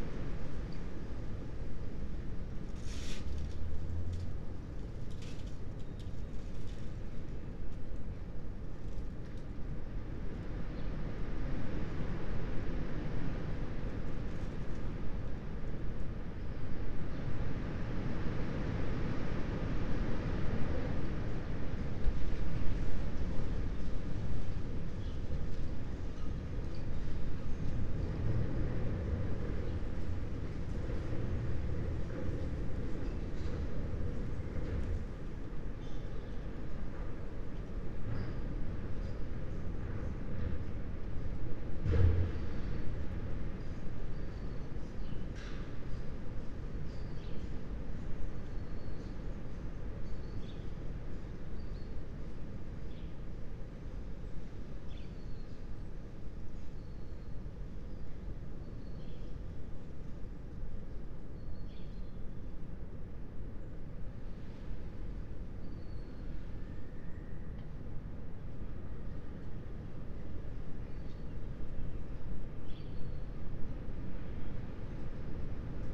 09:55 Berlin Bürknerstr., backyard window - Hinterhof / backyard ambience
Berlin, Germany